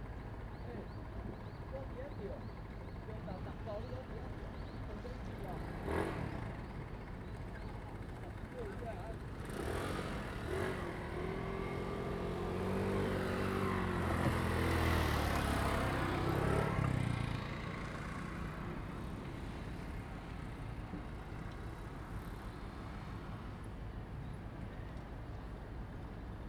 南寮漁港, Lüdao Township - In the dock
In the dock
Zoom H2n MS +XY
Taitung County, Taiwan